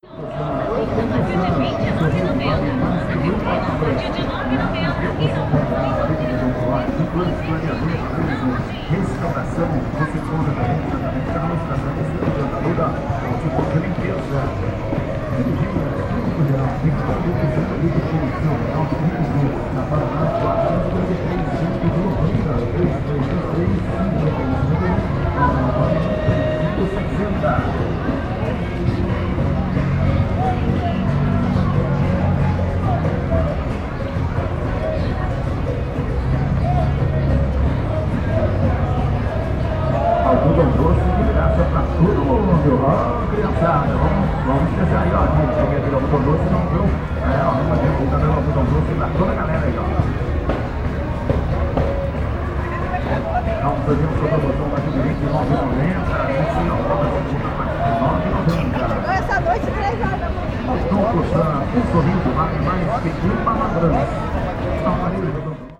Capoeira - Centro, Londrina - PR, Brasil - Calçadão: Territorialidade Comércio vs. Capoeira
Panorama sonoro gravado no Calçadão de Londrina, Paraná.
Categoria de som predominante: antropofonia (comércio, veículos e vozes).
Condições do tempo: ensolarado.
Data: 06/08/2016.
Hora de início: 10:32
Equipamento: Tascam DR-05.
Classificação dos sons
Antropofonia:
Sons Humanos: Sons da Voz; fala; Sons do corpo; passo;
Sons da Sociedade: Sons do Comércio; música de Lojas; anuncio e promoções; Músicas; bandas e orquestras; instrumentos musicais.
Sound panorama recorded at the Calçadão in Londrina, Paraná.
Predominant sound category: antropophony (trade, vehicles and voices).
Weather conditions: sunny.
Data: 06/08/2016.
Start time: 10:32
Human Sounds: Voice Sounds; speaks; Sounds of the body; step;
Sounds of the Society: Sons of Commerce; music of Stores; advertising and promotions; Music; bands and orchestras; musical instruments.
6 August 2016, ~11:00